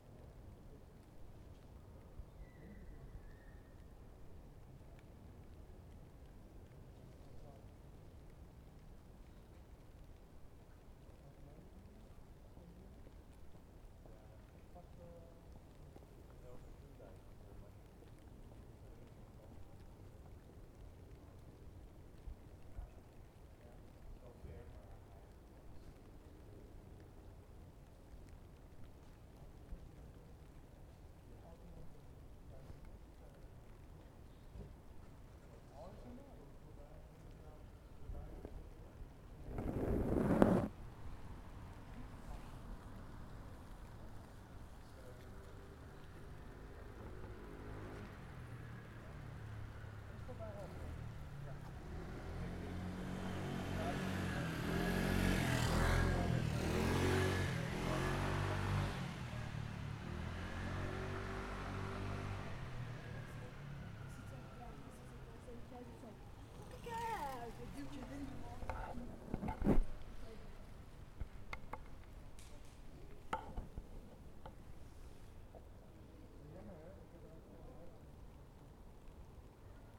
(description in English below)
Op de scooters na is dit een heel rustig hofje, de scooters gebruiken deze plek als sluiproute. Veel mensen vinden het geluid van de scooters storend, wellicht vanwege de associatie met het soms roekeloze rijgedrag in Amsterdam.
Beside the scooters that pass by, this is a very quiet courtyard, the scooters use this street to squeeze the way. Many people find the sound of scooters disturbing, perhaps because of its association with the sometimes reckless driving in Amsterdam.